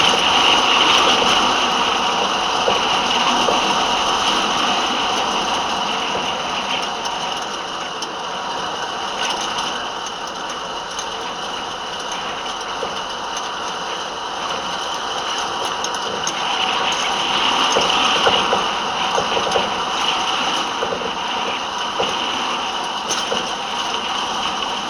Luttons, UK - Fencing with contact mics ...
Galvanised stock wire fencing with the wind blowing a gale ... two contact mics pushed into the wire elements ... listening to the ensuing clatter on headphones was wonderful ...
Malton, UK, 25 December, 14:30